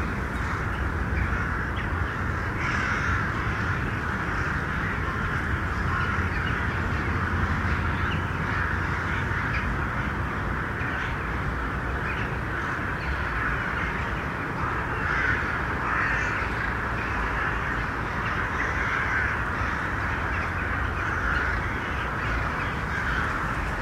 jackdaws and crows over Dresden Germany
Dresden, Germany, 17 January 2009